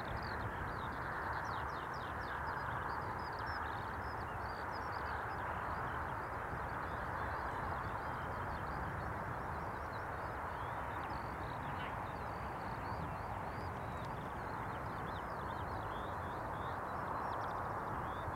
Contención Island Day 53 outer southwest - Walking to the sounds of Contención Island Day 53 Friday February 26th
The Drive Moor Place woodlands Oaklands Avenue Oaklands Grandstand Road Town Moor
A skylark murmers
reluctant to sing
in February sunshine
Flows of people
crisscross the moor
Jackdaw and common gull stand
as crows lumber into the wind
26 February 2021, 10:35